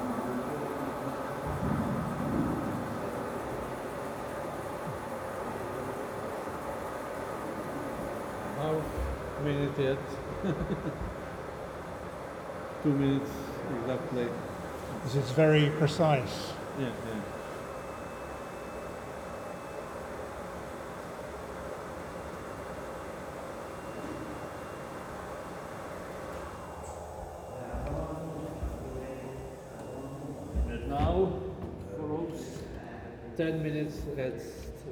Brewery Moucha, sounds of brewing craft beer, Údolní, Praha-Praha, Czechia - Adding precisely 2 minutes of hot water
On my visit to the Moucha Brewery I was very kindly given a small tour by Jan the head brewer there. Brewing was his life. He was previously employed by the old brewery when the full scale industry operated in Braník years ago. Today’s craft brewery is smaller in scale and a relatively quiet process. He allowed me to record a couple of the events which made sound. This one is adding hot water to the vats where the grain is fermenting. It must last for precisely two minutes.
Praha, Česko, 7 April, 10:47